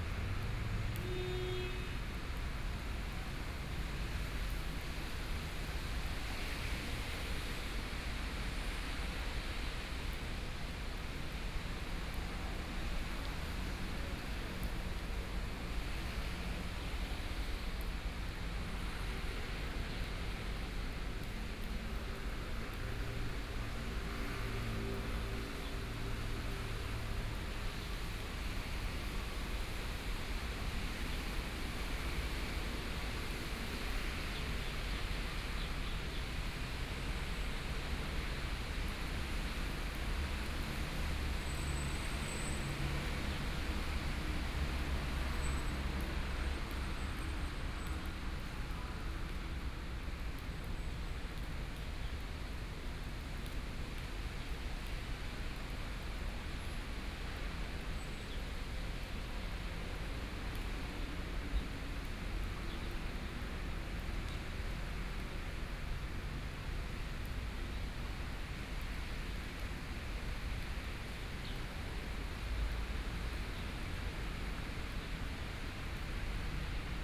Yilan City, Taiwan - Place the morning
Place the morning, Rainy Day, Traffic Sound, Birdcall, Binaural recordings, Zoom H4n+ Soundman OKM II